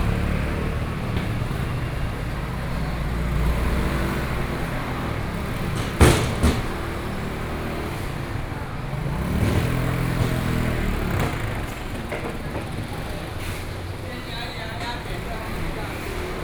花蓮市果菜市場, Hualien County - Fruit and vegetable market
walking in the Fruit and vegetable market, Traffic Sound, Chat
Binaural recordings
Heping Road, Hualien County, Taiwan, 28 August